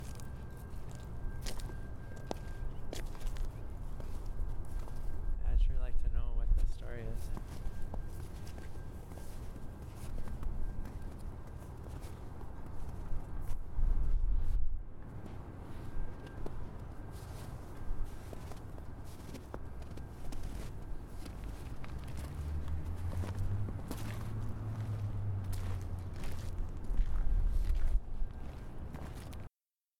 East Village, Calgary, AB, Canada - What's the story?
“This is my Village” explores narratives associated with sites and processes of uneven spatial development in the East Village and environs. The recorded conversations consider the historical and future potential of the site, in relation to the larger development of the East Village in the city.